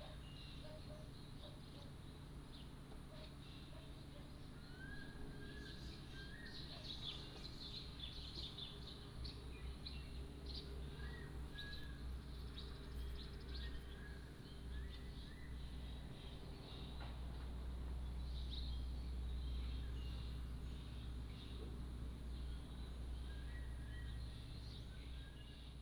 埔里鎮桃米里, Nantou County - Birdsong
Birdsong
Binaural recordings
Sony PCM D100+ Soundman OKM II
Puli Township, Nantou County, Taiwan, 29 April 2015